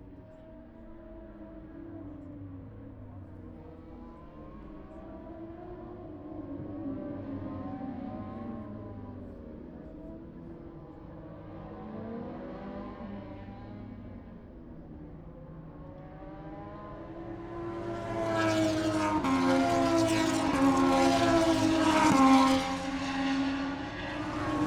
Towcester, UK - british motorcycle grand prix 2022 ... moto two ...
british motorcycle grand prix 2022 ... moto two free practice one ... wellington straight opposite practice start ... dpa 4060s clipped to bag to zoom h5 ...